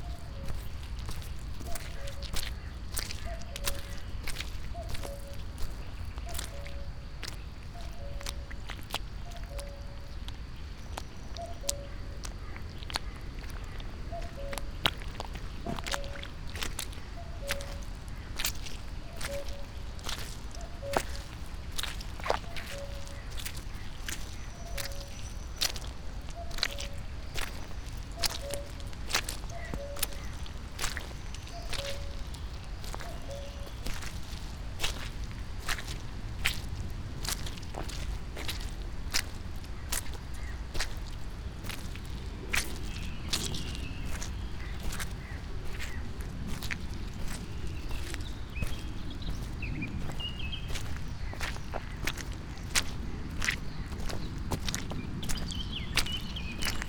path of seasons, forest edge, piramida - mud walk, cuckoo
Maribor, Slovenia, 28 April